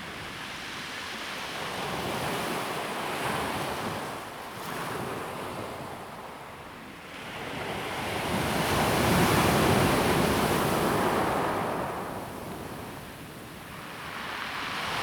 sound of the waves
Zoom H2n MS+XY +Sptial Audio
Hualien County, Taiwan, 19 July 2016